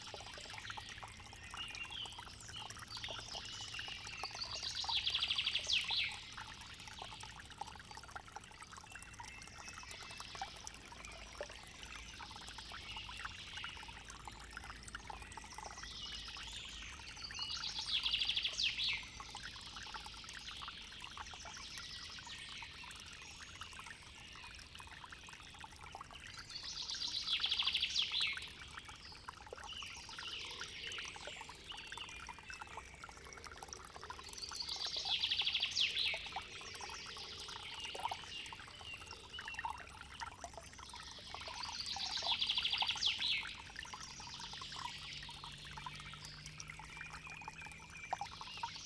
Beselich Niedertiefenbach, Ton - source of little creek
source of a little creek. unavoidable plane noise.